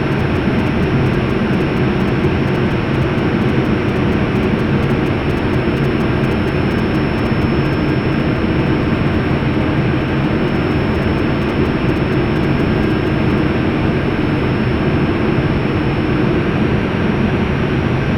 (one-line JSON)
{"title": "wind energy plant, Candal, Portugal, entrance door - windenergyDoor", "date": "2012-07-21 09:50:00", "description": "shotgun att the entrance door of the tower", "latitude": "40.84", "longitude": "-8.18", "altitude": "1098", "timezone": "Europe/Lisbon"}